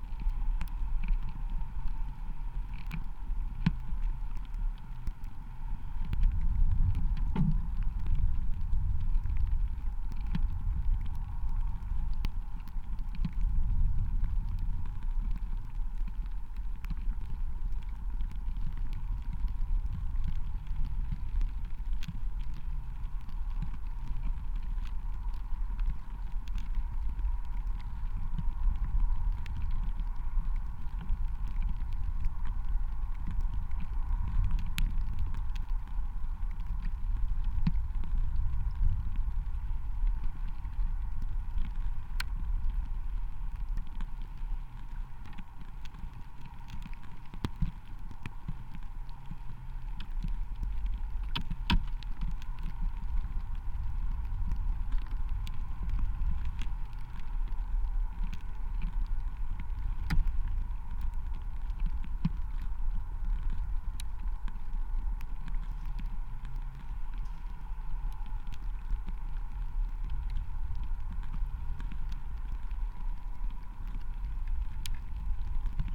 Siaudiniai, Lithuania, contact with tiny ice
lost hope for winter...but there were minus 1-2 degrees C last night, so it formed tiny ices on small rivers. contact microphone on the ice.